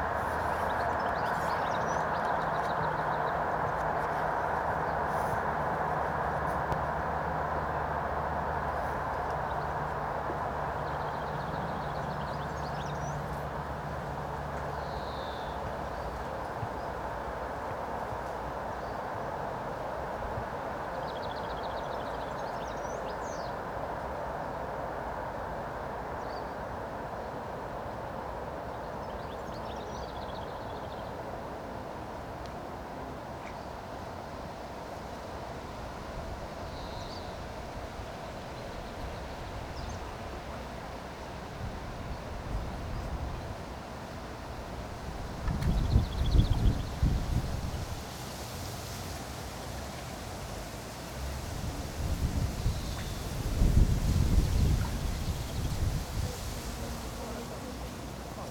Poznan, Strzeszyn district, Strzeszynskie lake - at the pier
ambience at the pier at Strzeszynskie Lake. gentle swish of the nearby rushes. some strange clicking sounds coming from the rushes as well. ambulance on an nearby road where there is rather heavy traffic normally. racing train sounds are also common in this place as one of the main train tracks leading out of Poznan towards western north is on the other side of the lake. planes taking of as the Poznan airport is also not far away. pages of a book turned by the wind. (sony d50)
Poznań-Jeżyce, Poland, 7 June 2018